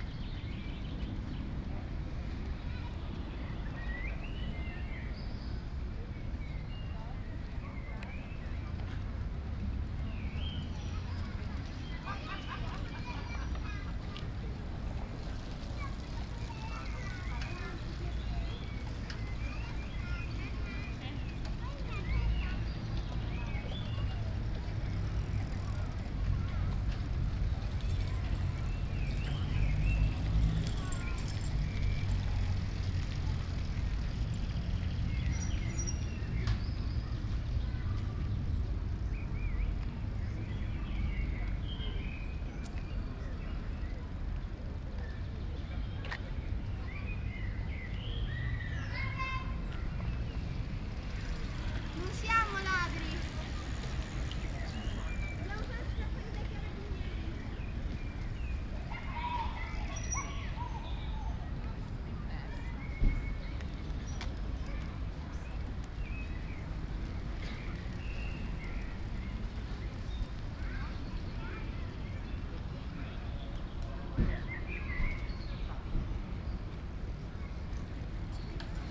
Ascolto il tuo cuore, città. I listen to your heart, city. Chapter LXXXVI - Reading on Sunday at Valentino Park in the time of COVID19 soundscape
"Reading on Sunday at Valentino Park in the time of COVID19" soundscape
Chapter LXXXVI of Ascolto il tuo cuore, città. I listen to your heart, city
Sunday May 24th 2020. San Salvario district Turin, staying at Valentino park to read a book, seventy five days after (but day twenty-one of of Phase II and day ight of Phase IIB abd day two of Phase IIC) of emergency disposition due to the epidemic of COVID19.
Start at 1:18 p.m. end at 1:52 p.m. duration of recording 34’’53”
Coordinates: lat. 45.0571, lon. 7.6887